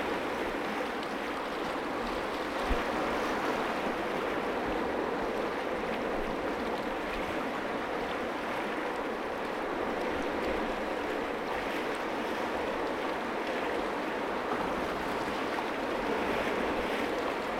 Bathurst Lighthouse, Rottnest Island WA, Australien - Waves on the rocky shore at night below the lighthouse
Recorded with a Sound Devices 702 field recorder and a modified Crown - SASS setup incorporating two Sennheiser mkh 20 microphones.